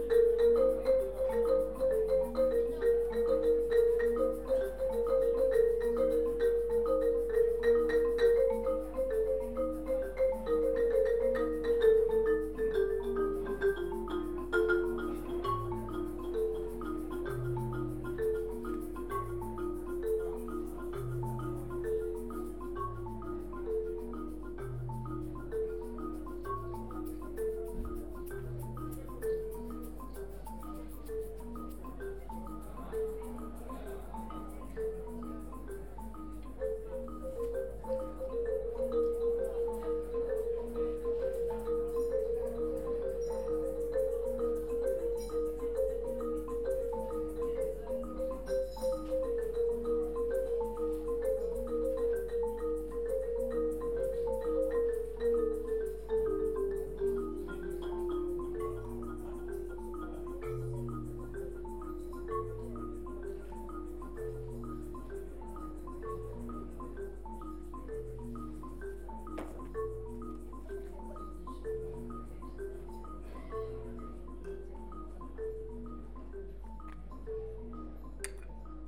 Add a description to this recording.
Bumerang band (Zagreb, HR), gig. You can hear marimbas and various percussion instrument in a medieval solid rock amphitheater with a wooden roof. recording setup:omni, Marantz PMD 620 - portable SD/SDHC card recorder